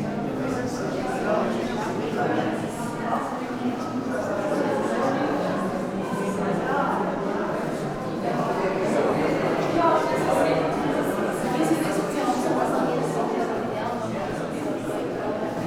Muzej norosti, Museum des Wahnsinns, Trate, Slovenia - voices